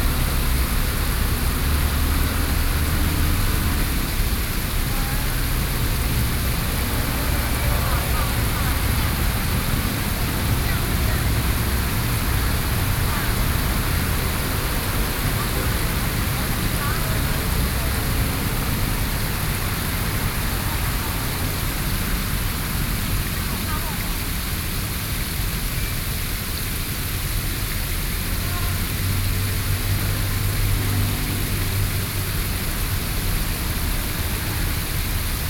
Sec., Keelung Rd., Xinyi Dist., Taipei City - Traffic noise